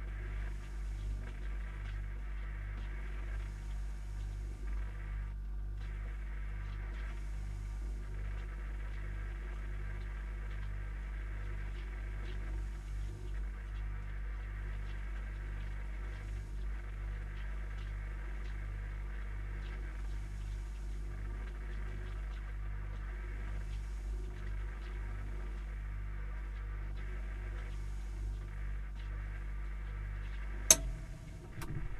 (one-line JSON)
{"title": "remscheid: johann-sebastian-bach-straße - the city, the country & me: refrigerator", "date": "2014-03-27 22:55:00", "description": "inside a refrigerator\nthe city, the country & me: march 27, 2014", "latitude": "51.18", "longitude": "7.18", "altitude": "347", "timezone": "Europe/Berlin"}